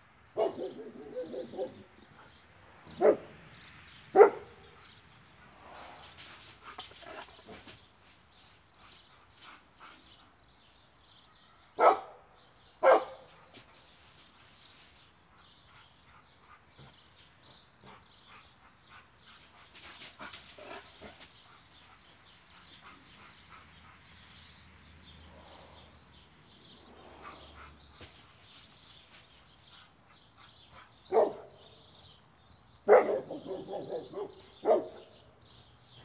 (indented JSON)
{
  "title": "Poznan, Mateckigo road - fenced dog",
  "date": "2014-05-21 19:50:00",
  "description": "a dog sensing my presence from behind a steel sheet gate and a brick wall. jumping around, trying to find a way over the wall or under the gate, baying, panting with anger.",
  "latitude": "52.46",
  "longitude": "16.90",
  "altitude": "97",
  "timezone": "Europe/Warsaw"
}